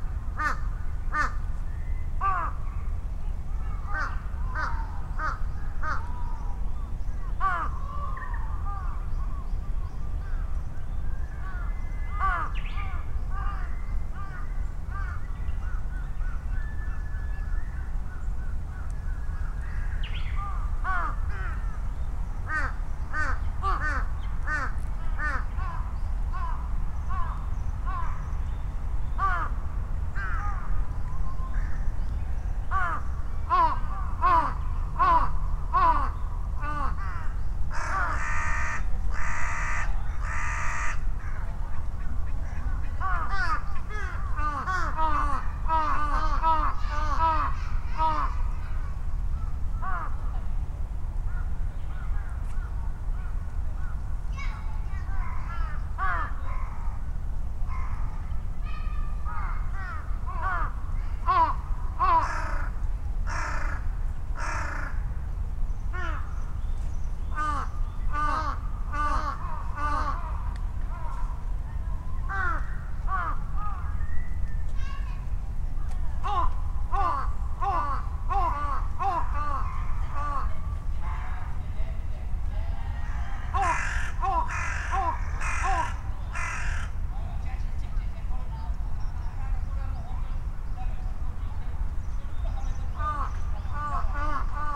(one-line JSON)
{"title": "Ichimiyake, Yasu-shi, Shiga-ken, Japan - Crows at Ichimiyake", "date": "2018-03-18 12:14:00", "description": "Noisy crows calling and responding, quiet Japanese bush warblers and other birds, children playing and other human sounds heard over the rumble of vehicles and aircraft on a Sunday at noon in Ichimiyake, Yasu City, Shiga Prefecture, Japan. Recorded on a Sony PCM-M10 with small omnidirectional mics attached to a bicycle handlebar bag. See details are and photos at Shiga Rivers.", "latitude": "35.08", "longitude": "136.01", "altitude": "100", "timezone": "Asia/Tokyo"}